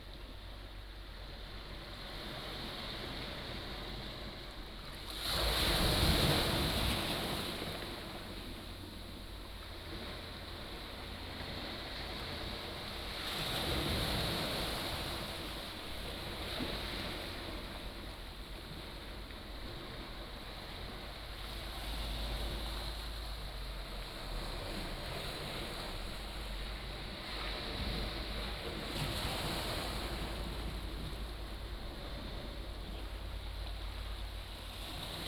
白沙村, Beigan Township - Sound of the waves
Small pier, Sound of the waves